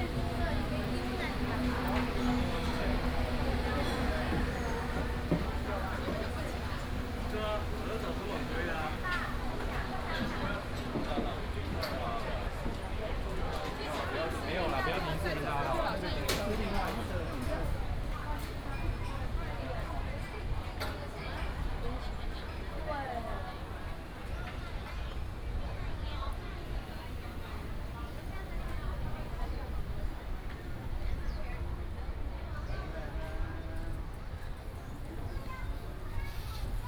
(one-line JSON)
{"title": "碧潭風景區, Xindian Dist., New Taipei City - the variety of restaurants and shops", "date": "2015-07-25 17:29:00", "description": "Walking through the variety of restaurants and shops", "latitude": "24.96", "longitude": "121.54", "altitude": "26", "timezone": "Asia/Taipei"}